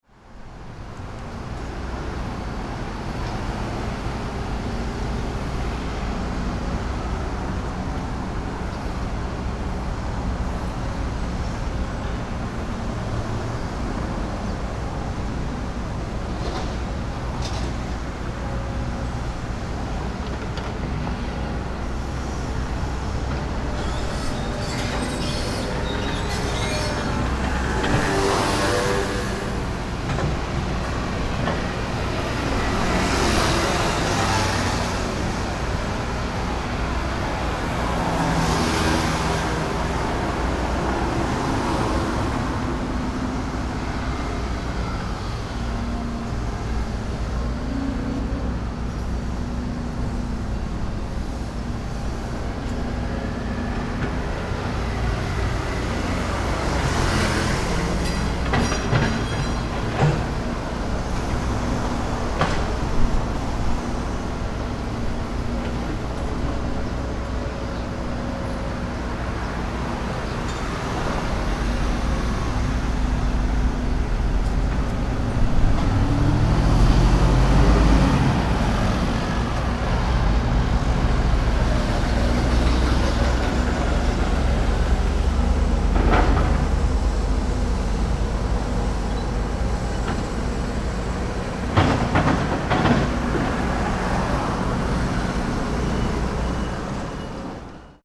Cianjhen District, Kaohsiung - Construction noise
Construction noise, Traffic Noise, Sony PCM D50
高雄市 (Kaohsiung City), 中華民國, 5 April